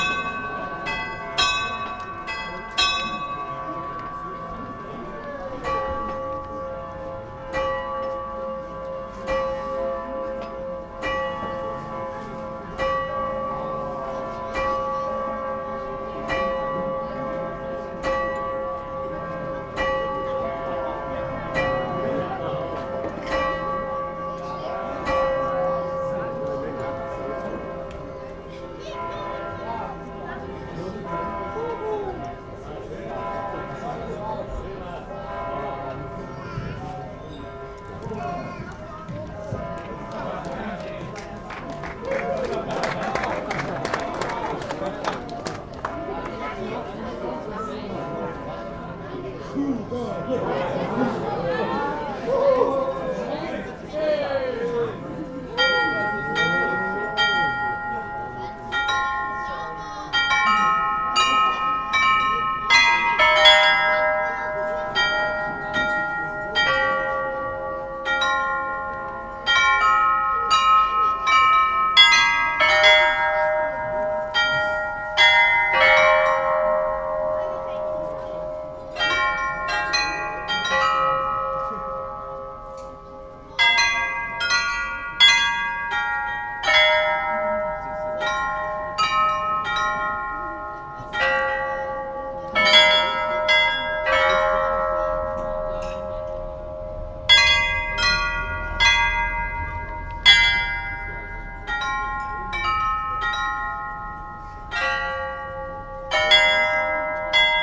{"title": "Olomouc, Czech Republic - Astronomical clock (Orloj)", "date": "2007-07-27 11:57:00", "description": "The astronomical clock in Olomouc is unique in its design -though it is originally Renaissance, the external part has been completely rebuilt after the WWII in order to celebrate communist ideology. Today a weird open-air memento of how lovely a killing beast can appear to those, who know nothing.\nwwwOsoundzooOcz", "latitude": "49.59", "longitude": "17.25", "altitude": "227", "timezone": "Europe/Prague"}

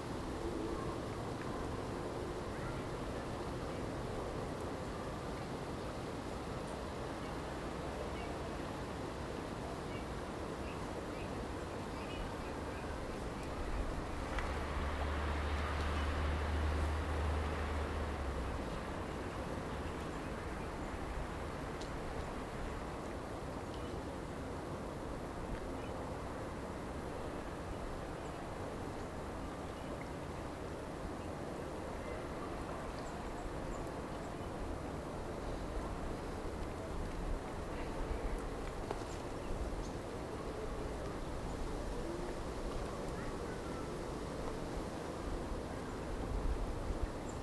{"title": "Mysłowice, Polska - Czarna Przemsza (Black Przemsza river)", "date": "2015-11-14 16:50:00", "latitude": "50.25", "longitude": "19.14", "altitude": "251", "timezone": "Europe/Warsaw"}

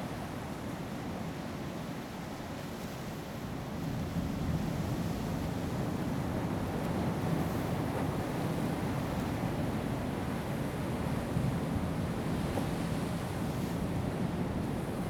{"title": "柚子湖, Lüdao Township - sound of the waves", "date": "2014-10-30 16:42:00", "description": "behind the rock, sound of the waves\nZoom H2n MS +XY", "latitude": "22.67", "longitude": "121.51", "altitude": "8", "timezone": "Asia/Taipei"}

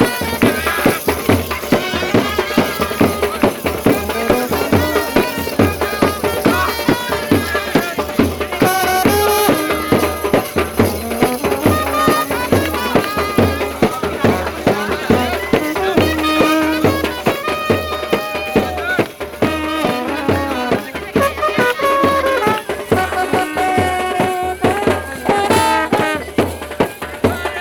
{"title": "Ghats of Varanasi, Ghasi Tola, Varanasi, Uttar Pradesh, Indien - wedding procession", "date": "1996-02-12 22:31:00", "description": "The recording catches a wedding procession late in the evening on the banks of the Ganges.\nA generator was carried for the electric light decoration.", "latitude": "25.32", "longitude": "83.02", "altitude": "70", "timezone": "Asia/Kolkata"}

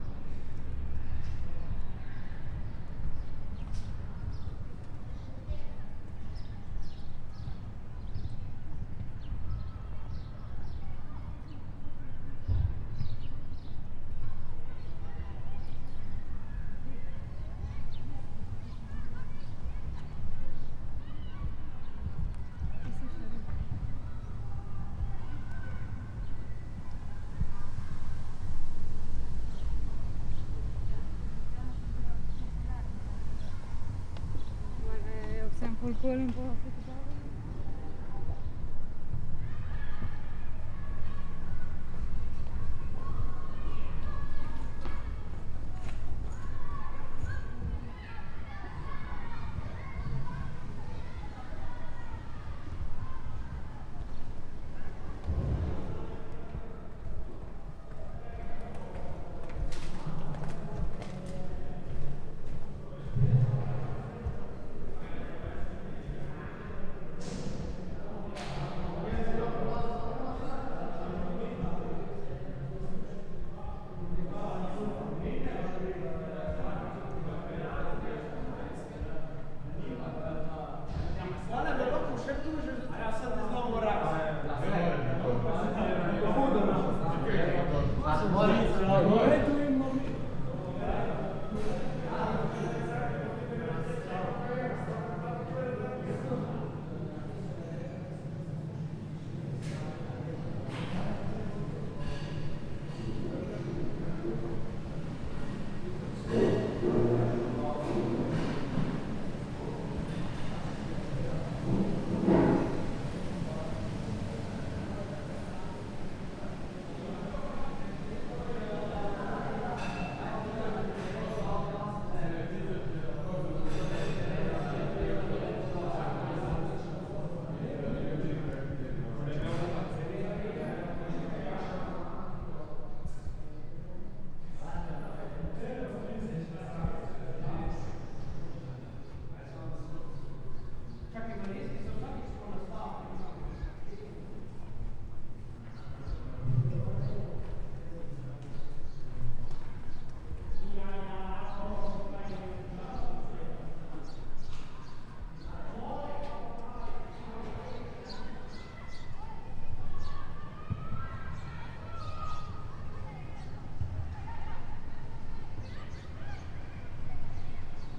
{"title": "Šolski center, Nova Gorica, Slovenija - Sprehod po TŠC-ju", "date": "2017-06-07 13:36:00", "description": "Some small talk in the school hall and cafeteria.\nRecorded with Zoom H5 + AKG C568 B", "latitude": "45.96", "longitude": "13.64", "altitude": "96", "timezone": "Europe/Ljubljana"}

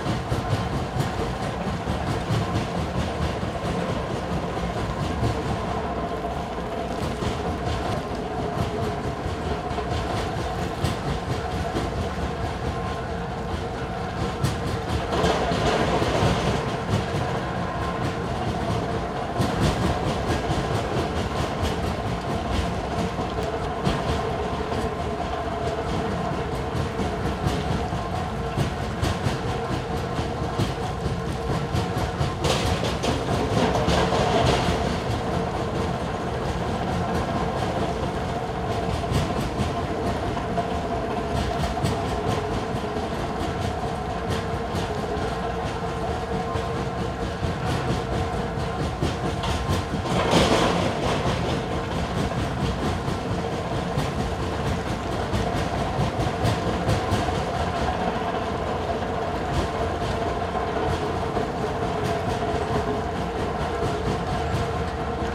{"title": "Camborne, Cornwall, UK - Industrial Stone Breaker", "date": "2015-06-15 14:30:00", "description": "I was taking a walk in the woods and came across a piece of land that was being cleared, there was a massive piece of machinery that was being fill with boulders which then turned them into smaller rocks, I was around 50 metres away. Recorded with DPA4060 microphones and a Tascam DR100.", "latitude": "50.20", "longitude": "-5.30", "altitude": "104", "timezone": "Europe/London"}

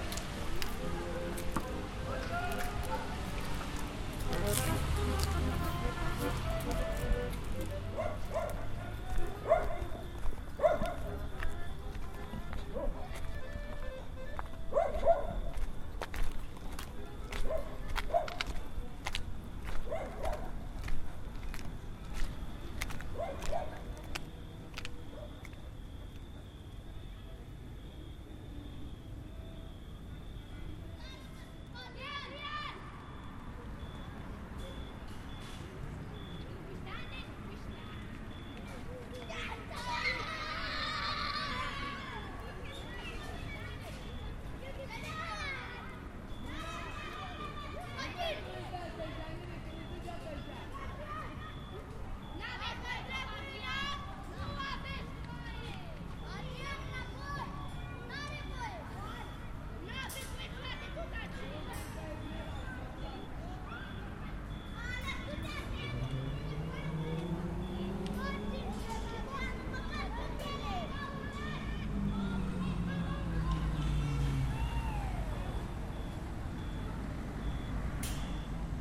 {"title": "Sector, Bucharest, Romania - Nightwalk in Bucharest", "date": "2013-07-19 23:00:00", "description": "Late night walk from Buzesti Str. onto Berzei Str. and the National Opera Park.", "latitude": "44.44", "longitude": "26.08", "altitude": "82", "timezone": "Europe/Bucharest"}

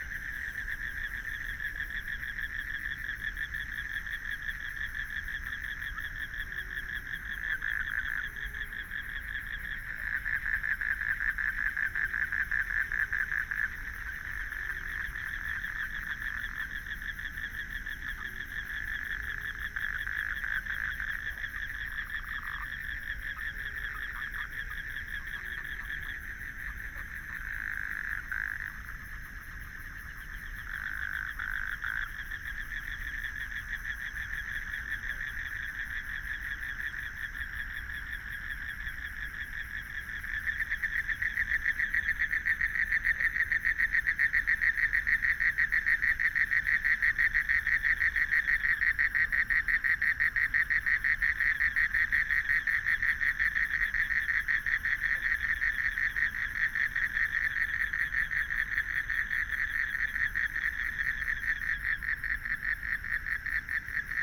{"title": "關渡里, Beitou District - Frogs sound", "date": "2014-03-18 19:53:00", "description": "Frogs sound, Traffic Sound, Environmental Noise\nBinaural recordings\nSony PCM D100+ Soundman OKM II SoundMap20140318-4)", "latitude": "25.12", "longitude": "121.47", "timezone": "Asia/Taipei"}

